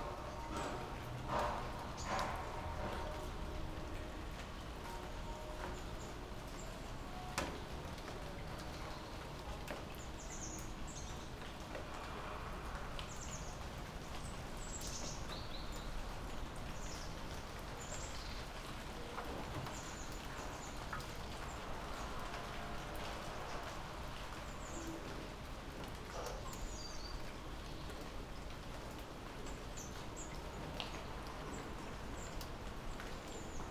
Berlin Bürknerstr., backyard window - autumn morning, workers, distant church bells, light rain
an autumn morning, workers, distant church bells, light rain, nothing special happens
(Sony PCM D50)